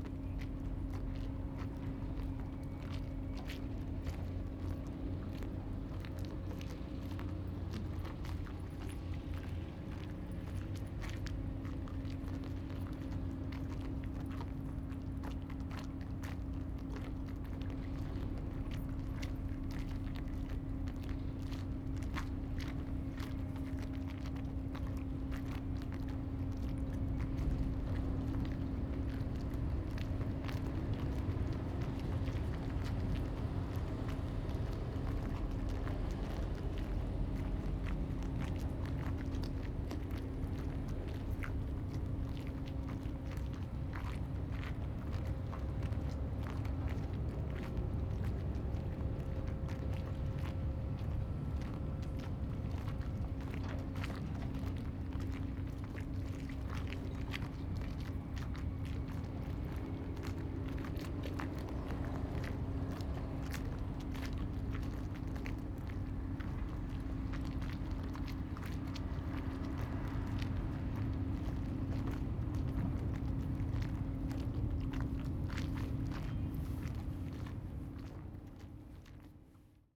龍門漁港, Huxi Township - In the dock
In the dock, Tide
Zoom H2n MS +XY
Penghu County, Taiwan, 21 October, 10:07